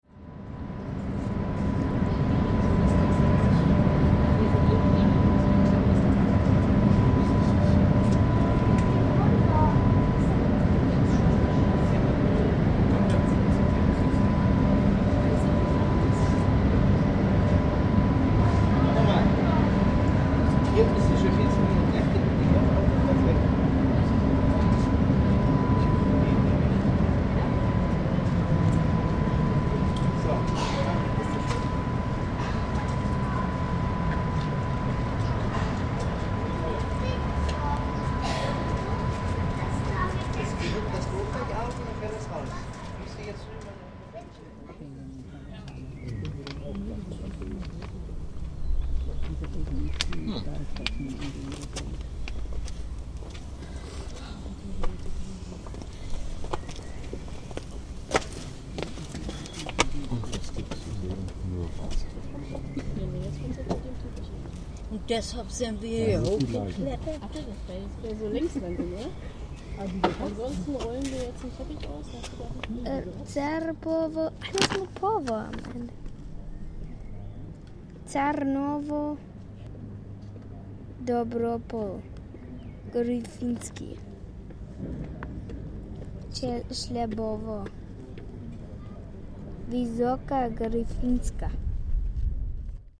- Hebewerk in Betrieb - Besucher - polnische Ortsnamen - 11.05.2008
Das Schiffshebewerk Niederfinow wurde 1934 in Betrieb genommen und ist damit das älteste noch arbeitende Schiffshebewerk Deutschlands. Es liegt am Oder-Havel-Kanal bei Niederfinow in Brandenburg. Am Nordrand des Eberswalder Urstromtals überwindet das technische Bauwerk einen Höhenunterschied von 36 Metern.